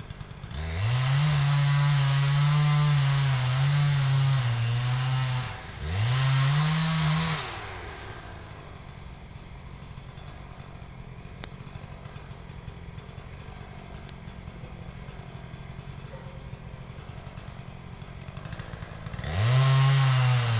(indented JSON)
{
  "title": "Lithuania, Utena, somebody cut trees",
  "date": "2011-09-22 17:15:00",
  "description": "biking through the forest Ive spied two men cutting trees",
  "latitude": "55.52",
  "longitude": "25.60",
  "altitude": "117",
  "timezone": "Europe/Vilnius"
}